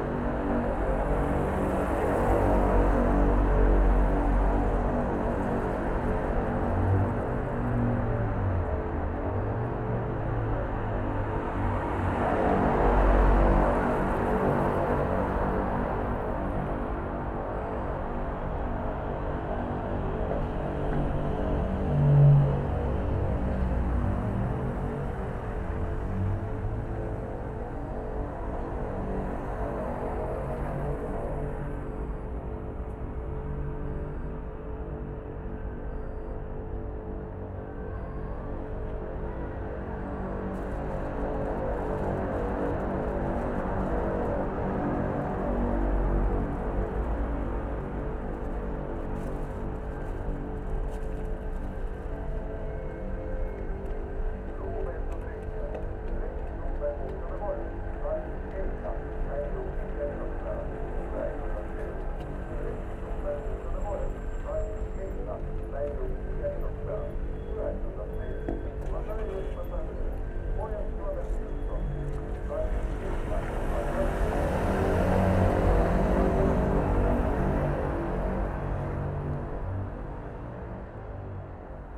{"title": "Tallinn, Baltijaam carpark barrier - Tallinn, Baltijaam carpark barrier (recorded w/ kessu karu)", "date": "2011-04-20 14:12:00", "description": "hidden sounds, traffic filtered by a barrier blocking cars from entering a paid car park at Tallinns main train station.", "latitude": "59.44", "longitude": "24.74", "timezone": "Europe/Tallinn"}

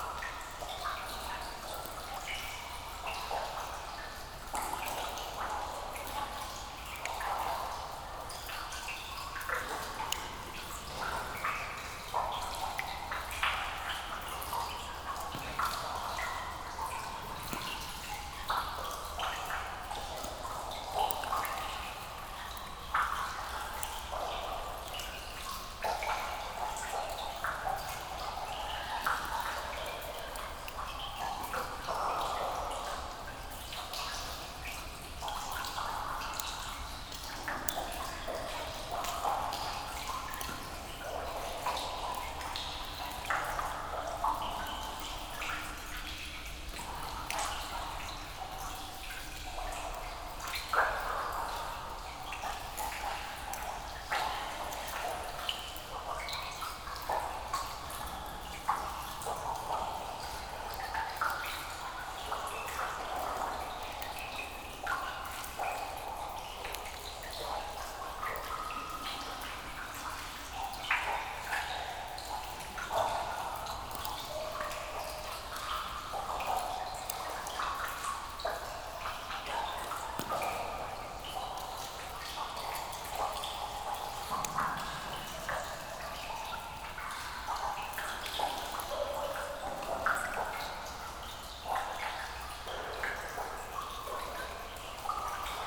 {"title": "Chassal, France - Chassal underground quarry", "date": "2017-06-14 11:50:00", "description": "In this village of the Jura area, there's a small marble underground quarry. The square room includes a lake. This is here the pleasant sound of drops falling into the lake, some drops falling directly on the microphones, and also a few sounds from the outside as the room is not very huge.", "latitude": "46.36", "longitude": "5.80", "altitude": "451", "timezone": "Europe/Paris"}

